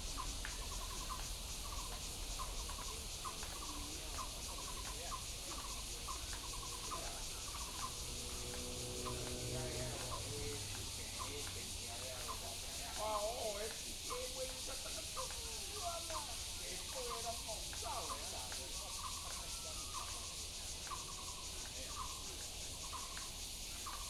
富陽自然生態公園, Taipei City, Taiwan - In the morning
Many elderly people doing exercise in the park, Bird calls, Cicadas cry
Taipei City, Da’an District, 台北聯絡線, 17 July 2015